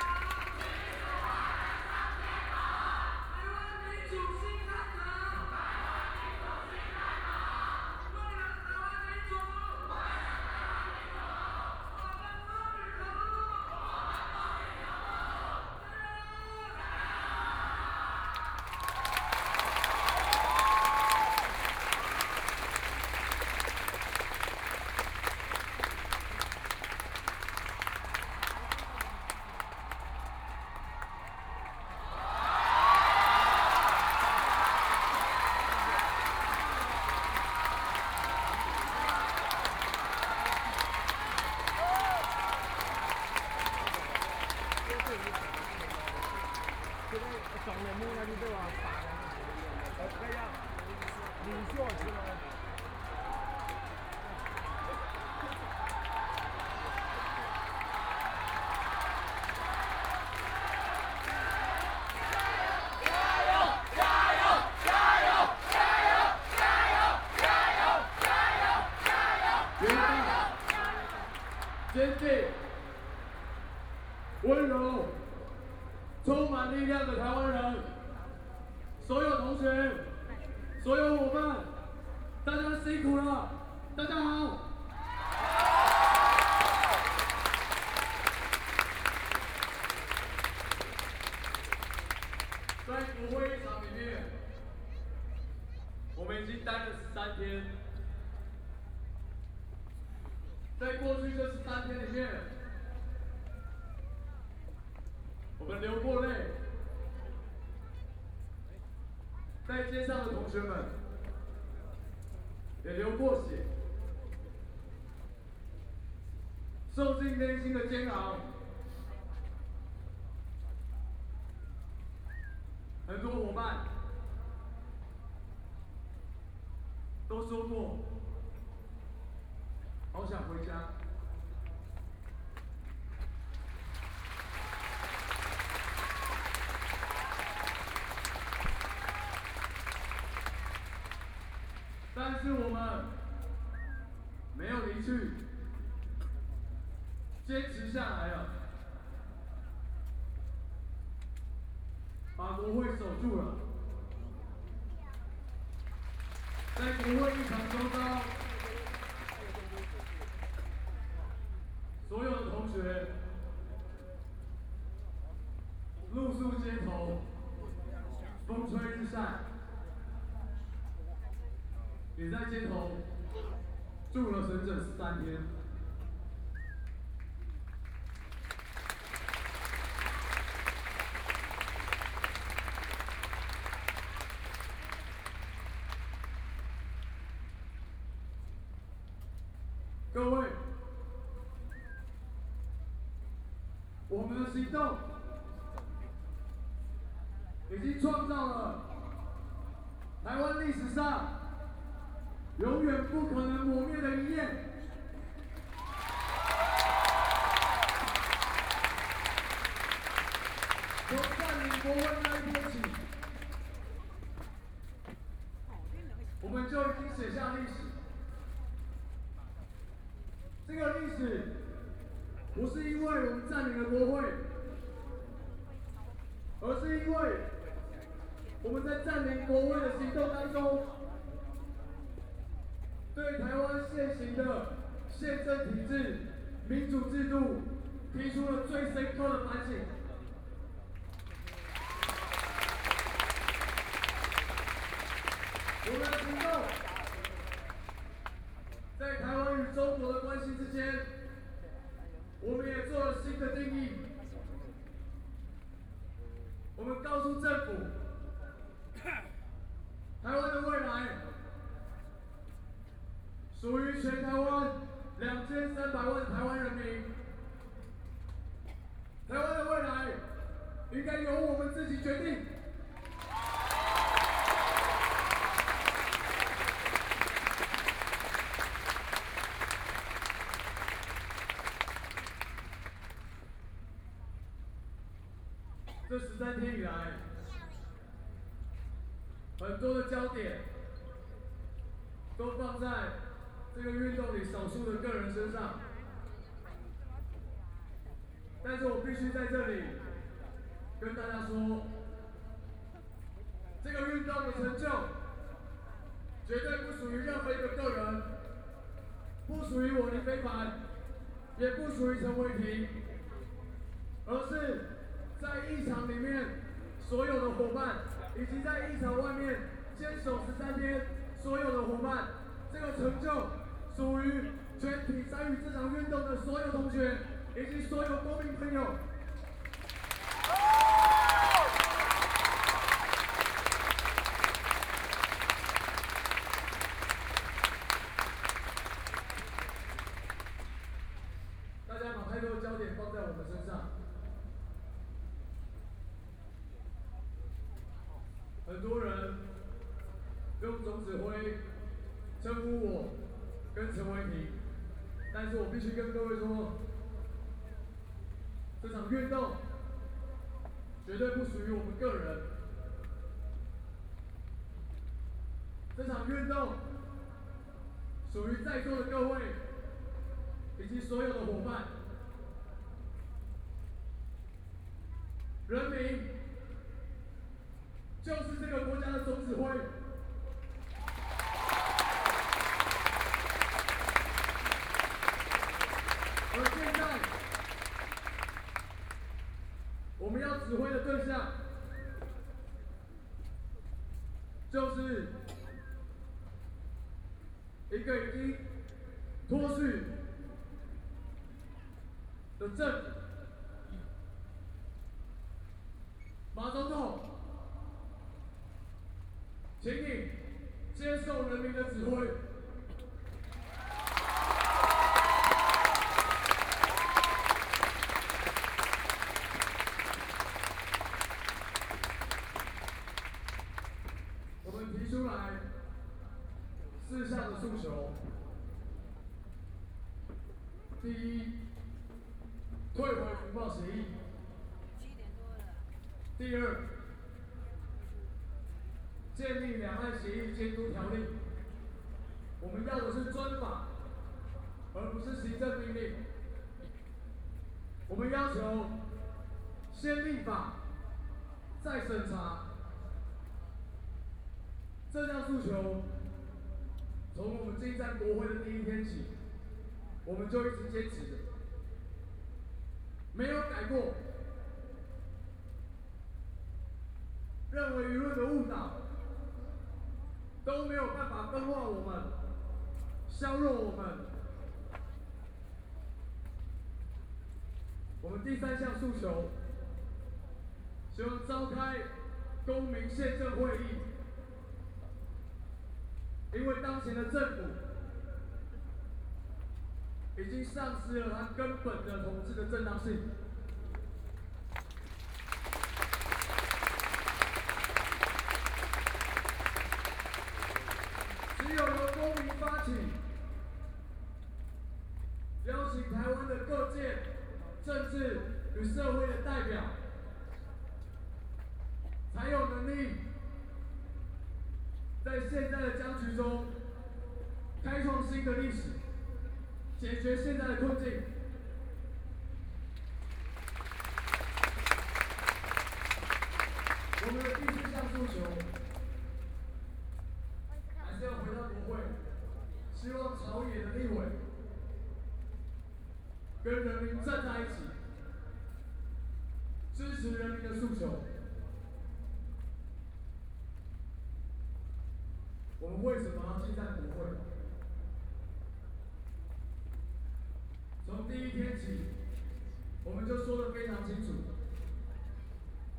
Sunflower Movement, The Sunflower Movement (aka Occupy Parliament) is an ongoing student and civil protest in Taiwan, currently centered at the Legislative Yuan. More than fifty thousand people attended, Commander (college students) are speech